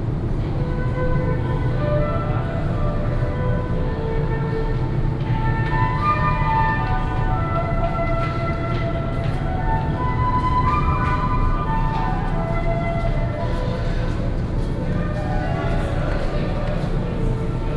Taichung Station, Central Dist., Taichung City - Walking in the underpass
Walking in the underpass, Air conditioning noise, Street performers, Footsteps